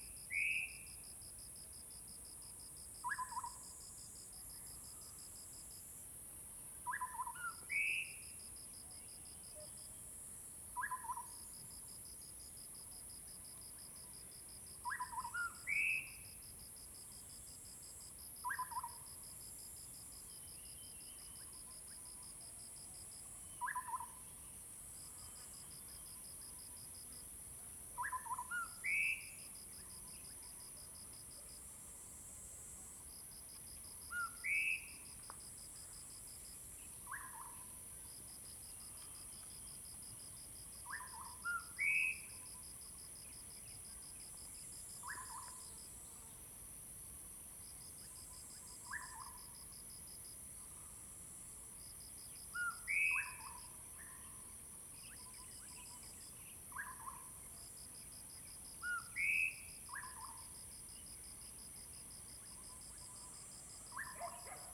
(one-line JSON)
{"title": "Hualong Ln., 埔里鎮桃米里 - Bird calls", "date": "2016-05-04 08:07:00", "description": "Bird sounds, Dogs barking\nZoom H2n MS+XY", "latitude": "23.93", "longitude": "120.90", "timezone": "Asia/Taipei"}